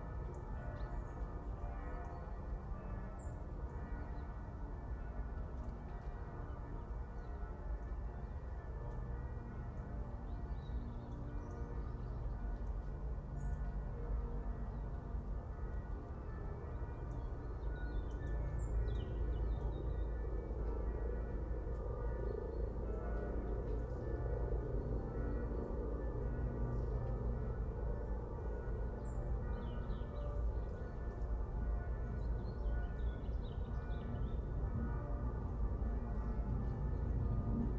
Rain, trains, clangy bells, autumn robin, ravens, stream from the Schöneberger Südgelände nature reserve, Berlin, Germany - Clangy bells, an autumn robin sings, fast train, distant helicopter
Nearer clangier bells begin. A distant robin sings – nice to hear in the cold autumn. A train passes at speed joined by a droning helicopter.
Deutschland, 28 November 2021